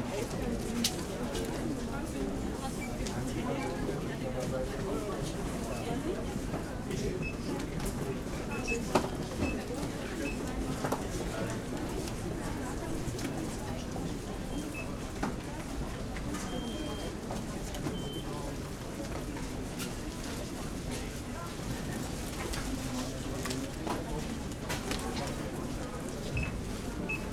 Berlin, Friedrichstr., bookstore - christmas bookstore 2010
Berlin, Germany